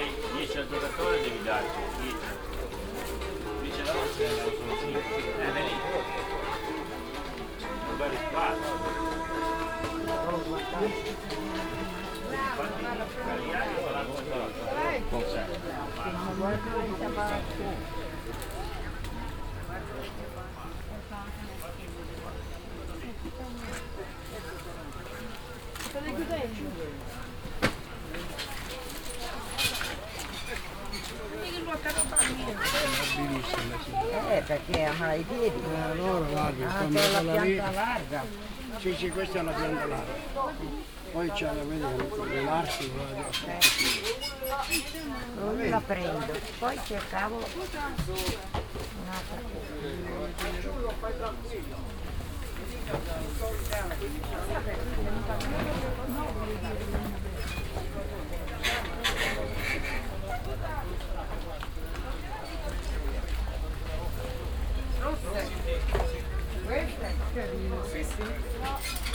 alassio, via giovanni batista, weekly market
atmosphere on the weekly market in the morning time
soundmap international: social ambiences/ listen to the people in & outdoor topographic field recordings
25 July, ~18:00